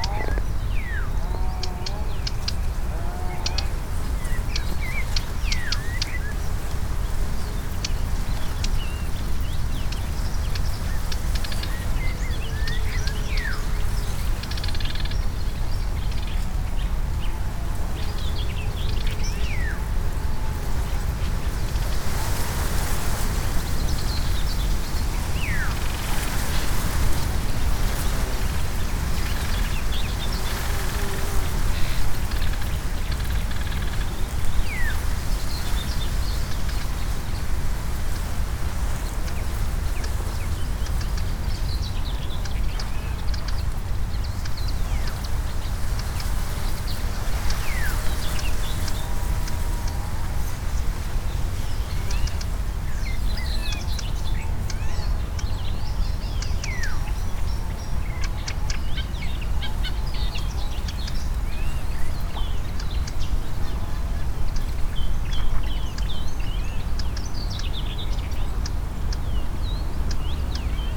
wetlands near Bargedzino village - wetlands ambience
ambience at the wetlands and peatbogs near Bargedzino village. (roland r-07)